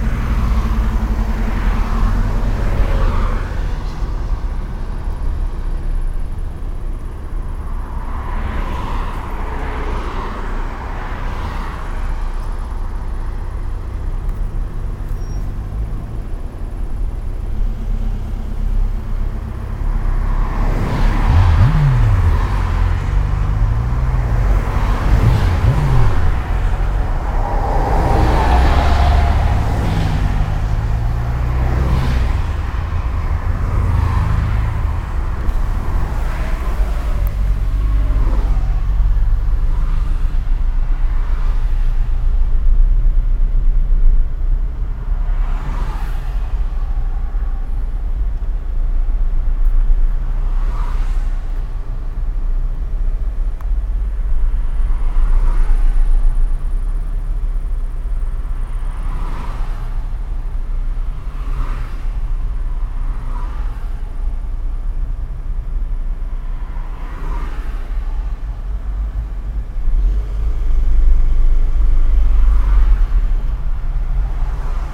in a traffic jam, while traffic is passing by regular on the parallel street
soundmap nrw - social ambiences and topographic field recordings
22 September